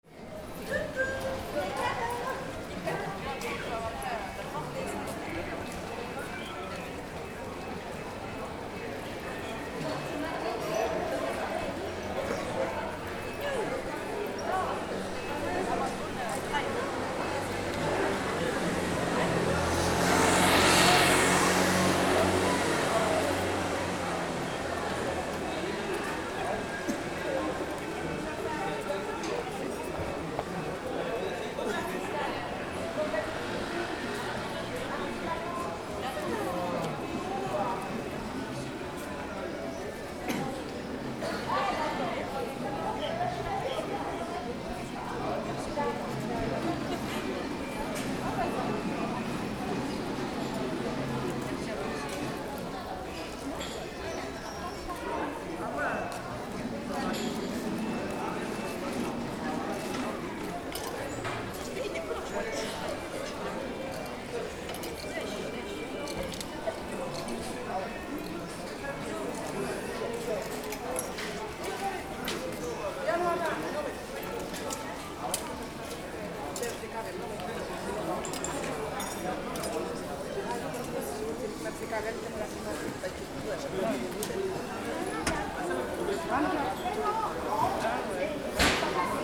Rue de la République, Saint-Denis, France - Outside Histoire dOr Jeweller
This recording is one of a series of recording, mapping the changing soundscape around St Denis (Recorded with the on-board microphones of a Tascam DR-40).